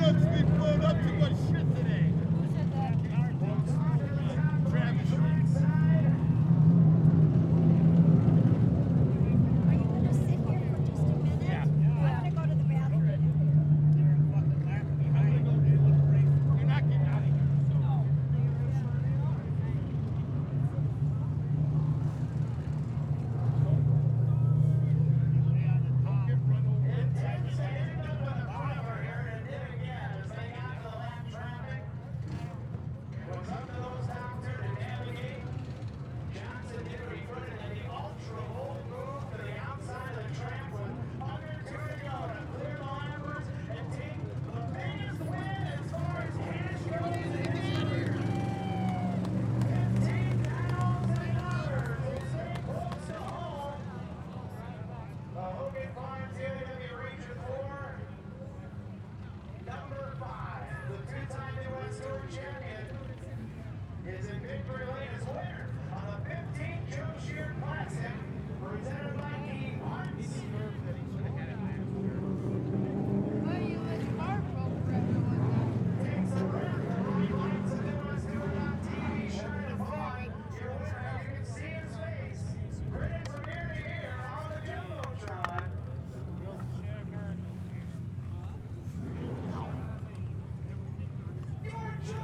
Recorded at the Joe Shear Classic an ARCA Midwest Tour Super Late Model Race at Madison International Speedway. This starts just prior to driver introductions and goes through the driver introductions, the command to start engines, the 200 lap race and the victory lane interview with the winner.